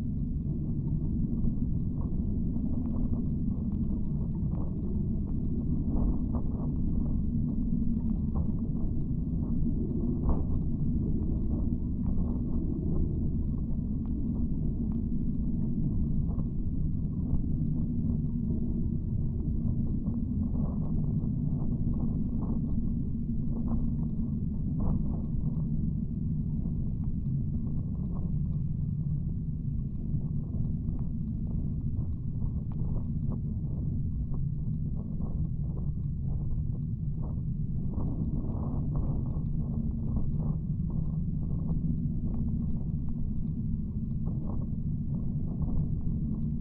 lake Kertuoja, Lithuania, paracord drone
20 meters long paracord between the trees .contact microphones capturing the drone